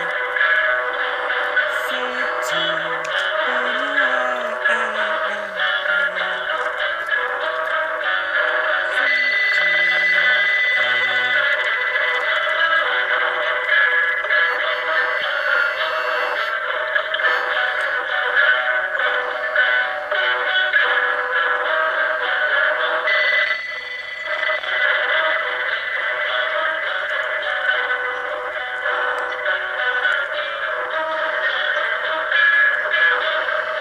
Shitty Listener recording session around Szczecin
Szczecin, Poland